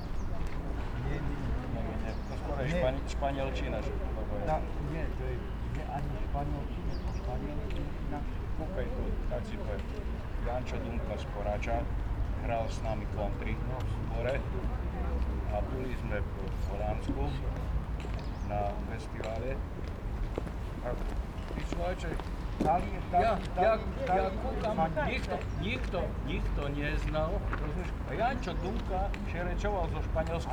Levoča, Levoča, Slovakia - Morning on Master Paul's Square
Quiet sunny morning on Master Paul's Square in Levoča. A mess in nearby St. Jacob's church is comming to an end - church doors open, people are walking home and chatting.